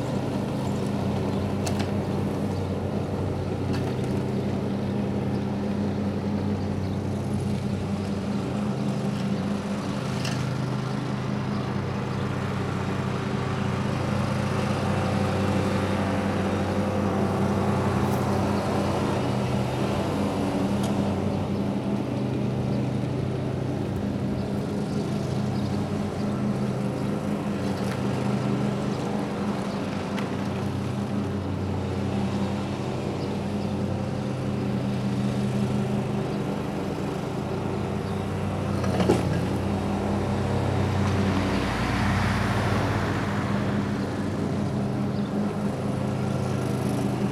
Morasko - lawn-mowing
a man mowing a lawn on a tractor. engine roar, clatter of stick and rocks hitting the blade. birds take over as soon as he leaves.